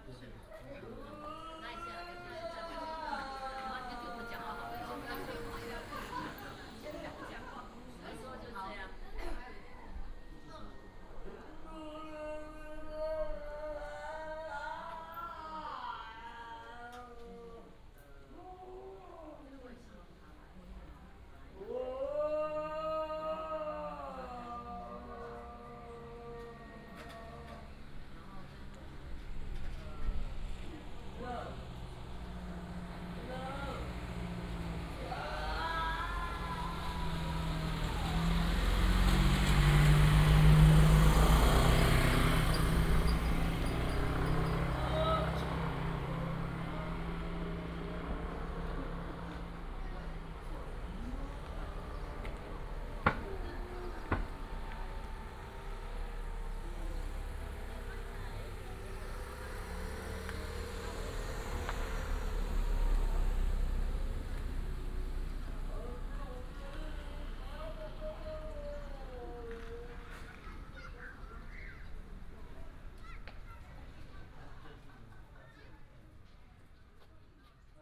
Daniao, Dawu Township, Taitung County - In aboriginal tribal streets
Paiwan people, birds sound, In aboriginal tribal streets, traffic sound
Taitung County, Dawu Township, 大鳥聯外道路, 4 April 2018